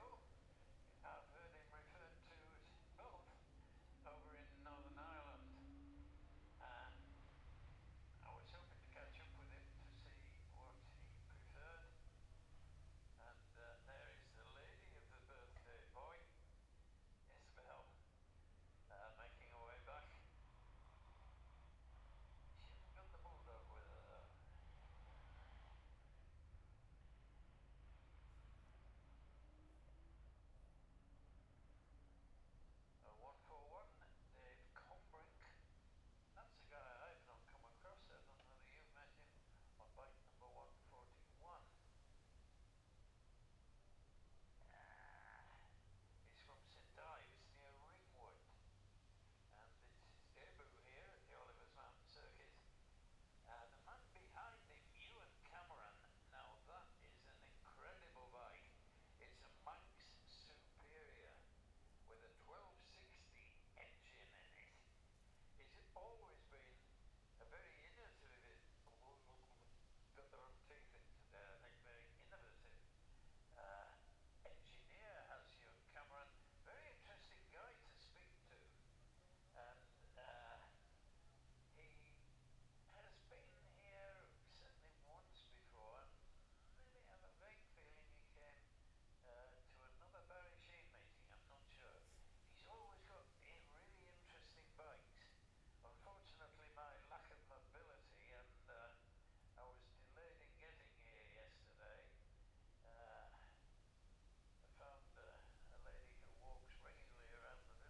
Jacksons Ln, Scarborough, UK - gold cup 2022 ... classic s'bikes ... practice ...
the steve henshaw gold cup 2022 ... classic superbikes practice ... dpa 4060s on t-bar on tripod to zoom f6 ...